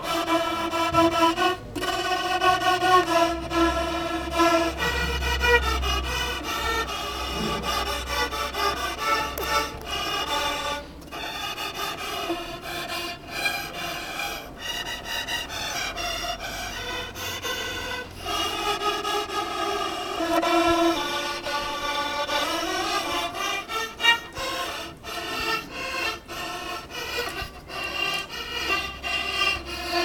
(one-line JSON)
{"title": "bonifazius, bürknerstr. - kaputte geige, mädchen spielt", "date": "2008-11-18 18:00:00", "description": "18.11.2008 19:48 kaputte geige, bogen ohne kolophonium, mädchen spielt / broken violin, no colophony for bow, little girl playing", "latitude": "52.49", "longitude": "13.43", "altitude": "50", "timezone": "Europe/Berlin"}